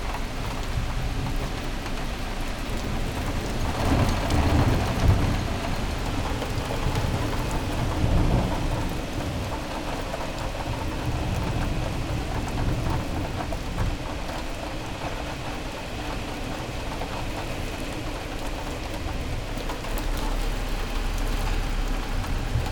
from/behind window, Mladinska, Maribor, Slovenia - rain
autumn storm, rain, thunder, drops on cars roofs
7 October 2012, 17:51